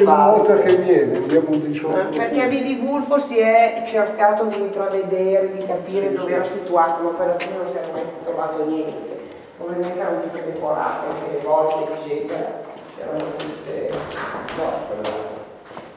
Castle of Lardirago (PV), Italy - A visit fo the Castle
By the courtyard, following a small group entering the Castle, closed for most time of the year, and visiting the small church.